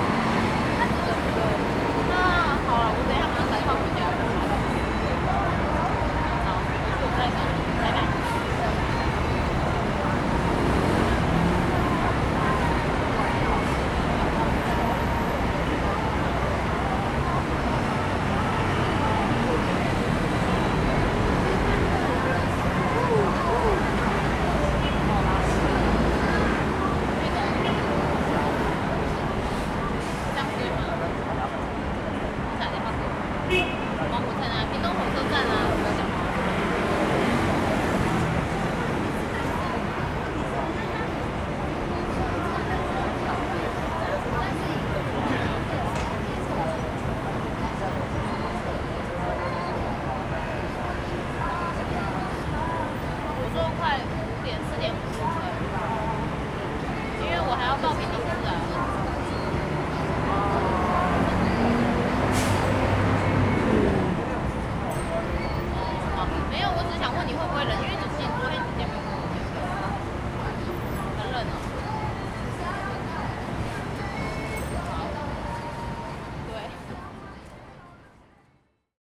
807台灣高雄市三民區長明里 - Hot afternoon
in front of the Bus stop, One girl is using the phone with a friend complained the weather is very hot, Sony ECM-MS907, Sony Hi-MD MZ-RH1